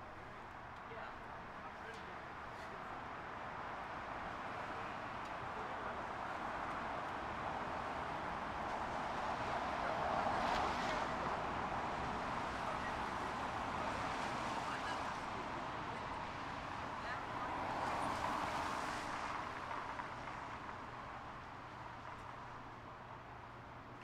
Corner of Holly and Railroad, Bellingham, WA, USA - Passersby
First installment of my project to document the sounds of Bellingham.
This is right at the hub of downtown Bellingham. just passersby.